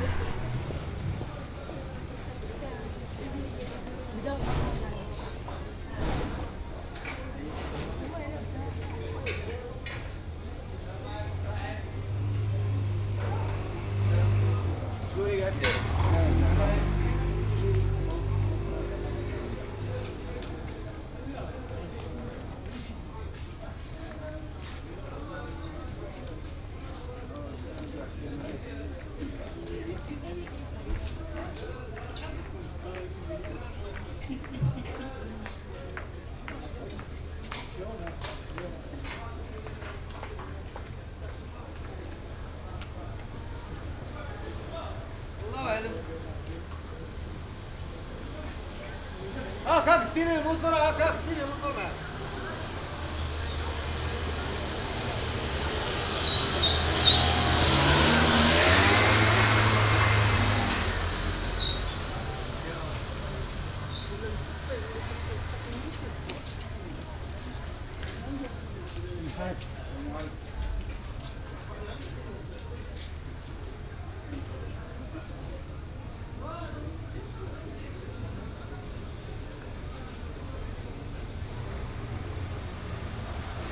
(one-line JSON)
{"title": "R.Sidi Abdelaziz, Marrakesch, Marokko - waiting at a busy street corner", "date": "2014-02-28 18:00:00", "description": "Busy early evening street corner at Route Sidi Abdelaziz\n(Sony D50, OKM2)", "latitude": "31.63", "longitude": "-7.99", "timezone": "Africa/Casablanca"}